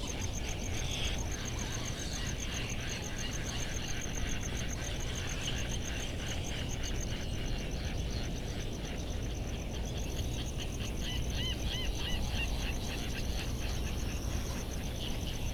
Sand Island ...Midway Atoll ... Bonin Petrel calls and flight calls ... recorded in the dark sat on the path to the All Hands Club ... lavalier mics either side of a fur covered table tennis bat ... mini jecklin disk ... calls and bill clappering from laysan albatross ... calls from black noddy and white terns ... cricket ticking the seconds ... generators kicking in and out ...